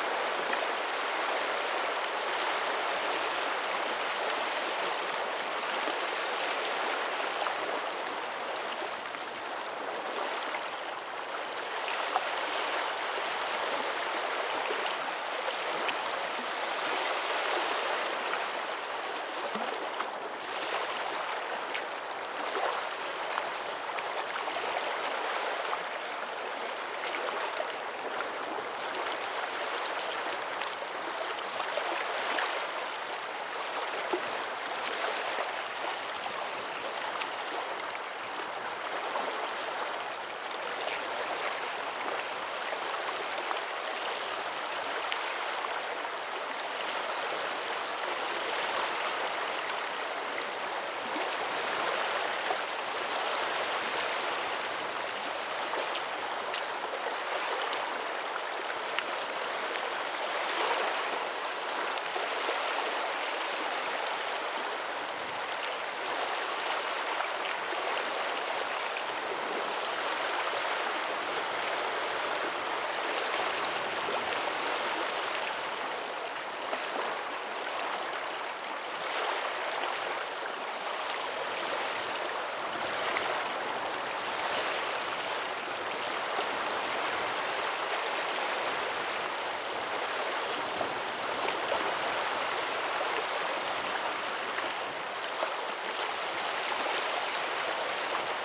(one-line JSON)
{"title": "Russia, White Sea - White Sea, The Summer shore", "date": "2012-10-22 13:40:00", "description": "White Sea, The Summer shore: the noise of the White Sea.\nБелое море, Летний берег: шум моря.", "latitude": "64.62", "longitude": "39.35", "altitude": "1", "timezone": "Europe/Moscow"}